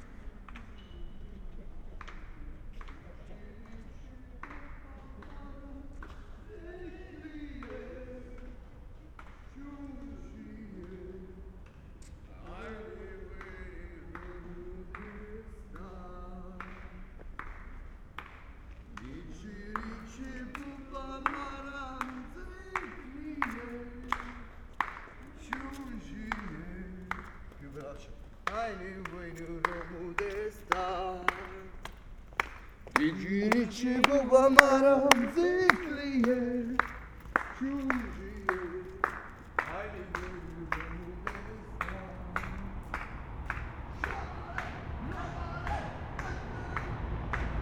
Berlin: Vermessungspunkt Friedel- / Pflügerstraße - Klangvermessung Kreuzkölln ::: 11.05.2013 ::: 03:08
11 May 2013, ~03:00